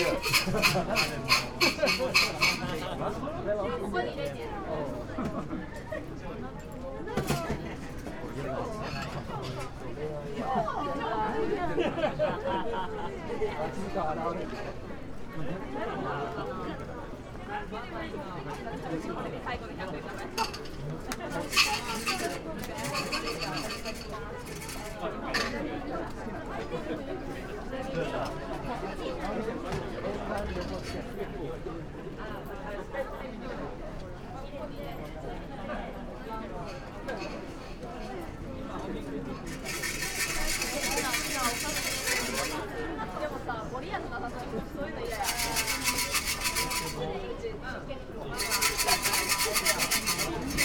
sensouji temple, asakusa, tokyo - wooden sticks oracle